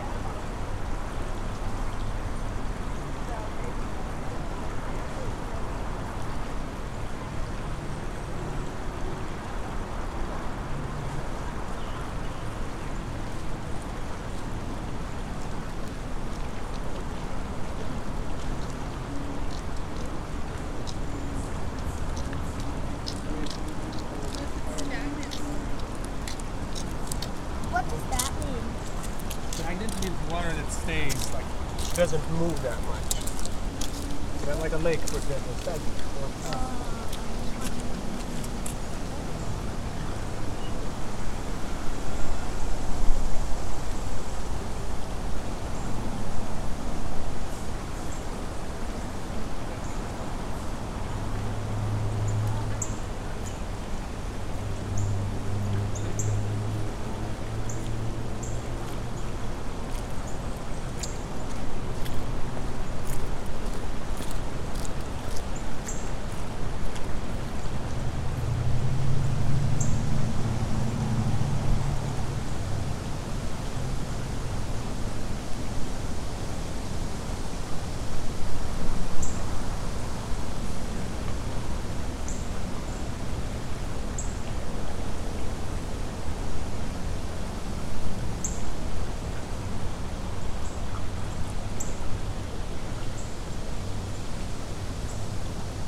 Atl peace park, Collier Rd NW, Atlanta, GA, USA - Footbridge Over Tanyard Creek
Captured from a narrow footbridge over the Tanyard Creek which connects the Northside Beltline trail to the Atlanta Peace Park. Some people pass by, and you can hear the urban creek slowly trickling. Noise from Collier Road spills into the adjacent greenspace. The mics were taped to the metal railing on the left side. A low cut was administered in post.
[Tascam Dr-100mkiii & Primo Clippy EM-272]